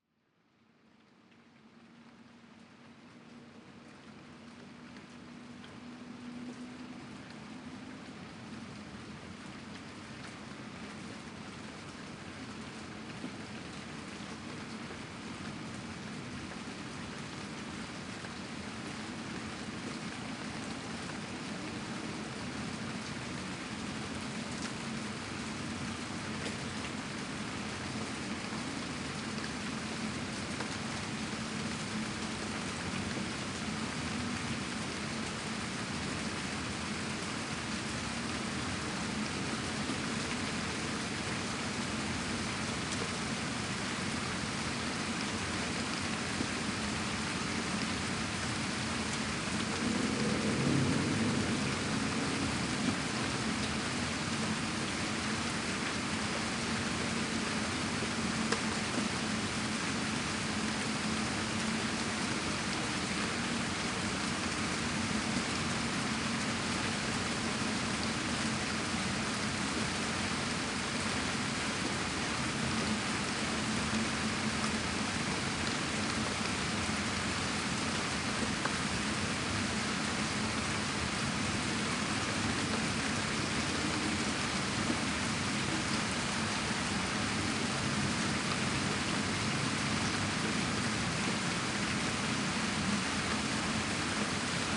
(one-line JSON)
{"title": "TherapiaRd. London, UK - Therapia Drizzle", "date": "2016-09-05 03:00:00", "description": "Early morning drizzle at my windowsill. Recorded with a pair of DPA4060s and a Marantz PMD661.", "latitude": "51.45", "longitude": "-0.06", "timezone": "Europe/London"}